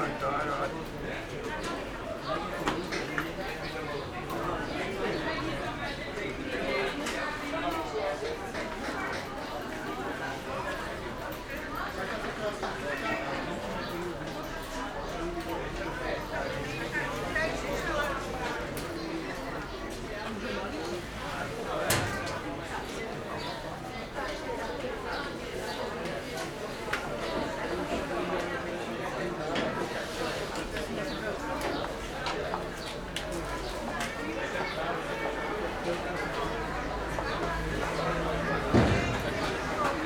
Bratislava, Market at Žilinská street - Market atmosphere XI
recorded with binaural microphones